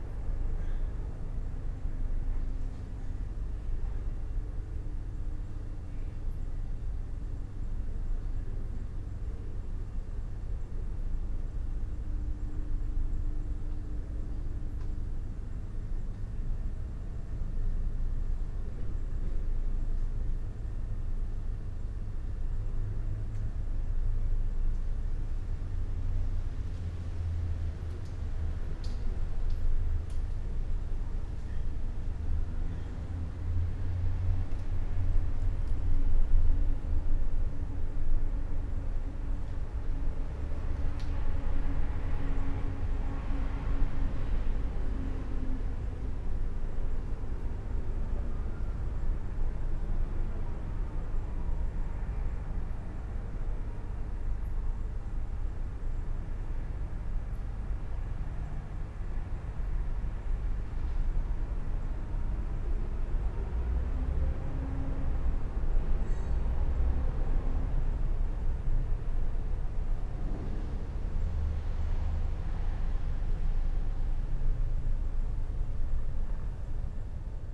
Vicolo delle Ville, Trieste, Italy - dry leaves
midnight ambience, stony street ...
8 September 2013